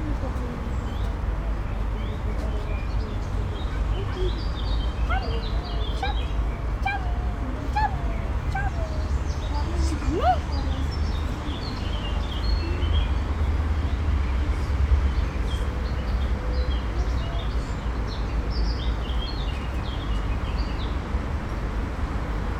Prague, Czech Republic - children playground Na výtoni
Black bird, singing with my daughter and sound of street traffic
Prague-Prague, Czech Republic